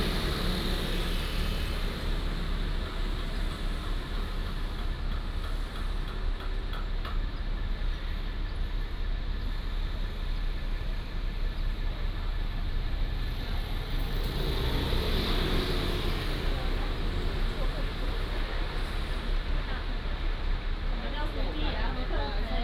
Traffic violation, Traffic sound, Driving between the police and the dispute, Bird call